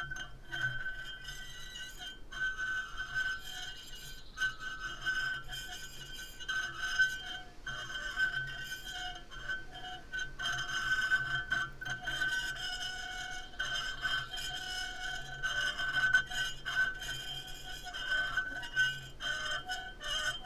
quarry, Marušići, Croatia - void voices - stony chambers of exploitation - iron, iron
9 July 2014